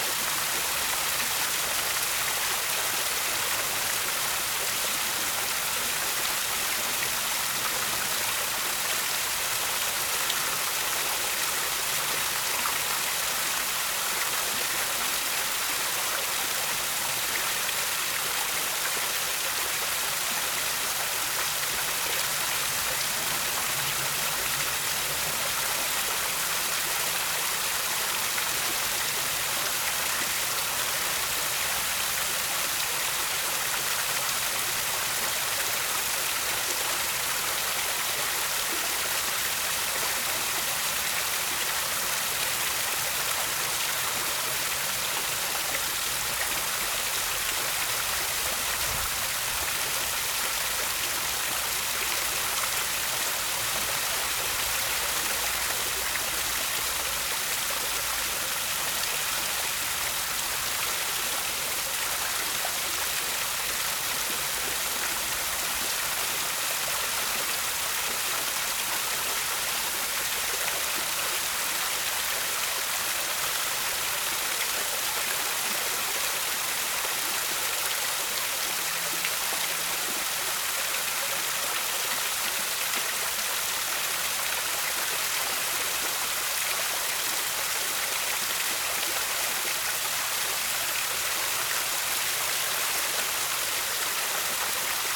{"title": "Lisbon, Portugal - Luminous Fountain, Lisbon", "date": "2015-07-19 23:39:00", "description": "Luminous Fountain in Alameda, Lisbon. Recorded at night.\nZoom H6", "latitude": "38.74", "longitude": "-9.13", "altitude": "75", "timezone": "Europe/Lisbon"}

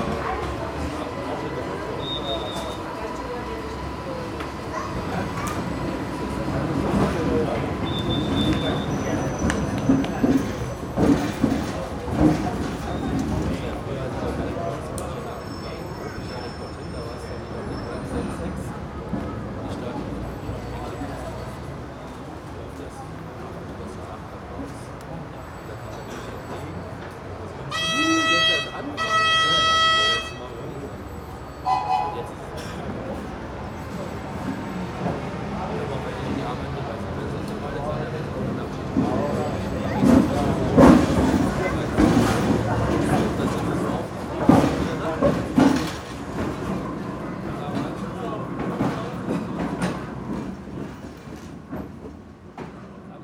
{"title": "Spain, Palma, Estació del Ferrocarril de Sóller - Vents ferroviaires / Rail winds (1)", "date": "2010-04-23 12:55:00", "description": "Manoeuvre in station.", "latitude": "39.58", "longitude": "2.65", "altitude": "28", "timezone": "Europe/Madrid"}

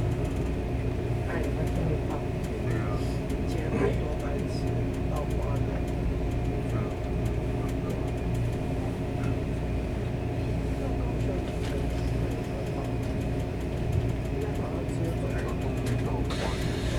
{"title": "Chiayi, Taiwan - On the train", "date": "2012-02-01 10:28:00", "latitude": "23.49", "longitude": "120.45", "altitude": "35", "timezone": "Asia/Taipei"}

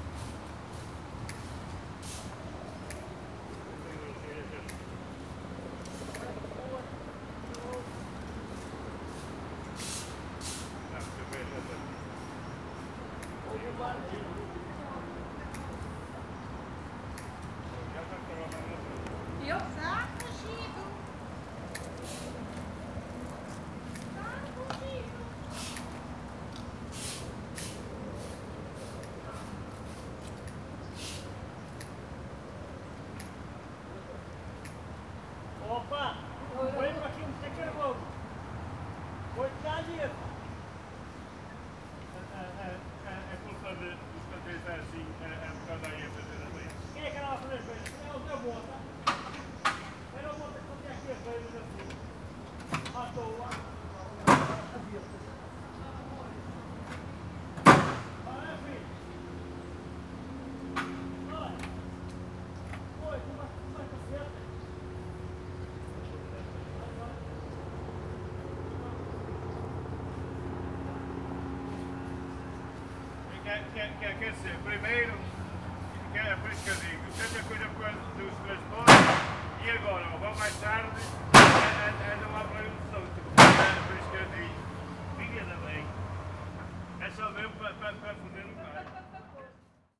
{"title": "Porto, Jardins do Palácio de Cristal do Porto - chats of the gardening crew", "date": "2013-10-01 13:54:00", "description": "the maintenance workers and the garners in good mood, talking and joking during their duty.", "latitude": "41.15", "longitude": "-8.63", "altitude": "62", "timezone": "Europe/Lisbon"}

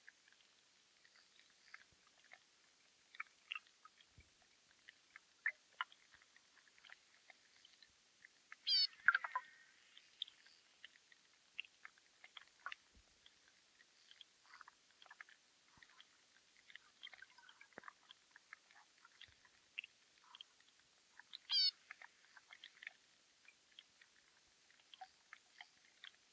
{"title": "Pakalniai, Lithuania, swamp underwater", "date": "2020-05-09 16:15:00", "description": "hydrophone recording in the swamp. some creature's voice...", "latitude": "55.43", "longitude": "25.48", "altitude": "164", "timezone": "Europe/Vilnius"}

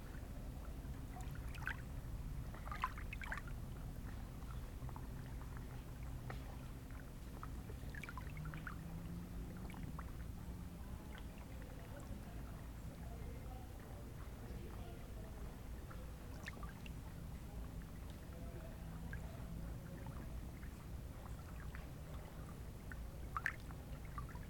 {"title": "La pointe de l'Ardre, Brison-Saint-Innocent, France - Vagues artificielles", "date": "2022-10-04 17:30:00", "description": "Plage de la pointe de l'Ardre pas de vent sur le lac, un bateau à moteur passe, plusieurs minute après l'onde aquatique de sa trainée vient faire déferler des vagues sur le rivage.", "latitude": "45.72", "longitude": "5.88", "altitude": "241", "timezone": "Europe/Paris"}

